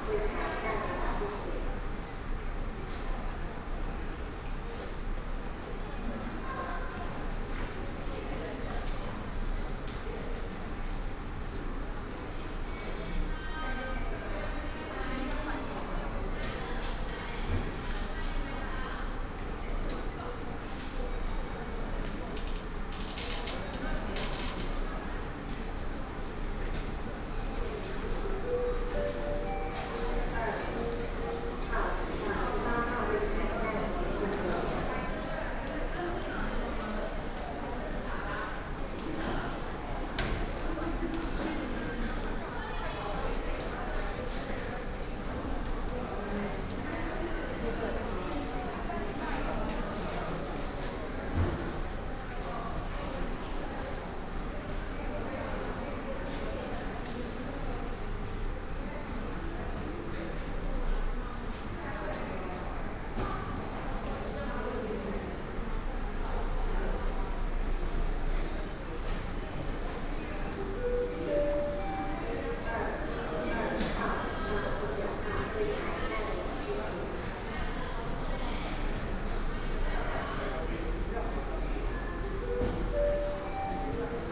臺北市立聯合醫院仁愛院區, Da’an Dist., Taipei City - In hospital
In hospital, Front payment counter